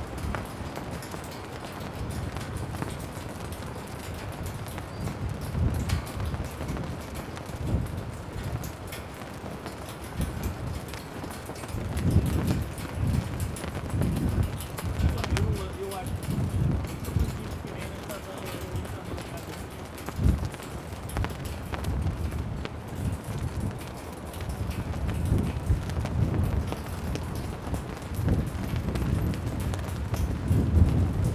Porto, west corner of the city, at the pier - flag pole drummers
a row of flag poles trembling in the wind. steel cables drum on the poles. flags flap fiercely. person talking on the phone.
30 September 2013, 15:19